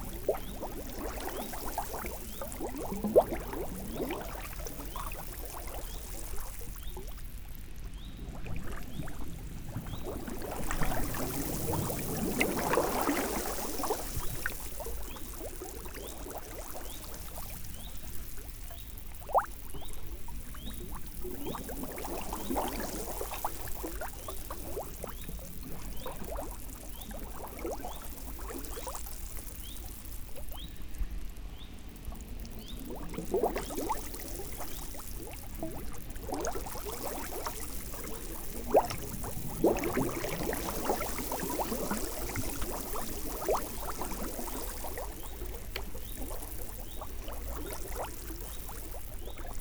Neuville-sur-Seine, France - Bubbles
When ther's no flow, the Seine river is very muddy. It's sludgy ! It's slimy ! While I'm walking into this mud, enormous bubble emanate in a curious ascent. It smells very bad, it's probably methane and hydrogen sulfide.
1 August, 16:20